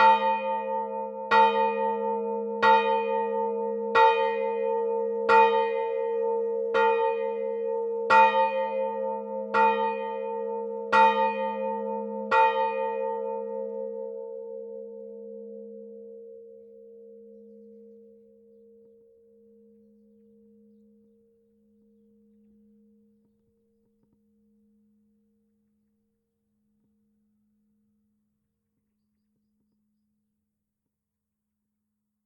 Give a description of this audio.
St-Lubin des Cinq Fonts (Eure-et-Loir), Chapelle, Cloche en volée manuelle